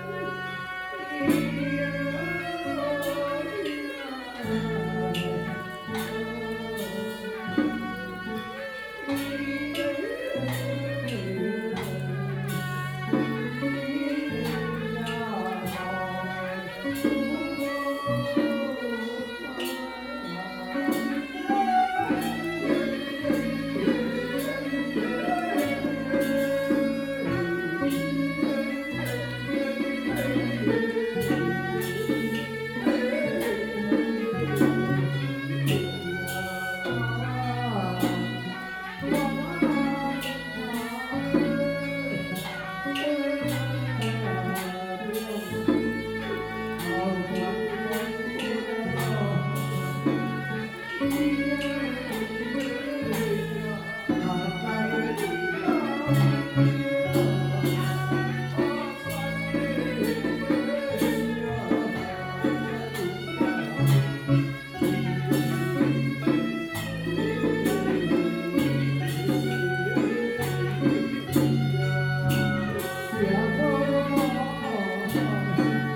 Qingshui District, Taichung - funeral ceremony
Traditional funeral ceremony in Taiwan, Zoom H4n + Soundman OKM II
台中市西區, 台中市, 中華民國